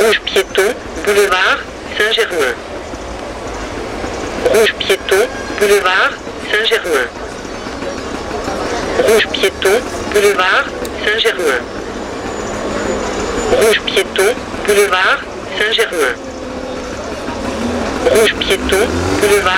machine bd St-Germain RadioFreeRobots
Paris, France